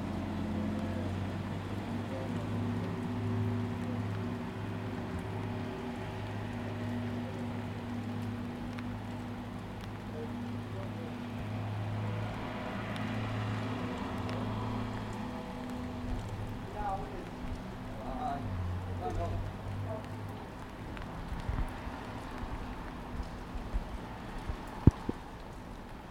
Newington Rd, Newcastle upon Tyne, UK - Street cleaning machine, Newington Road
Walking Festival of Sound
13 October 2019
Street cleaning machine leaving depot